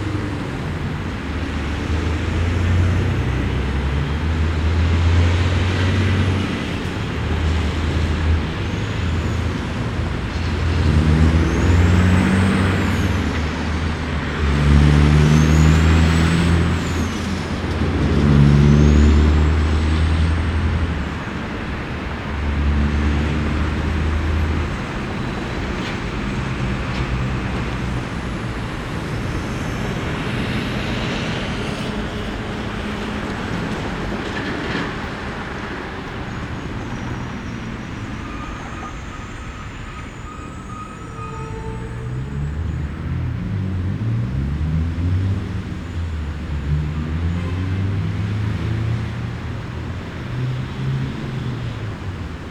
urban initiatives, urban design, landscape architecture, peculiar places
Carlton North VIC, Australia, 19 August 2010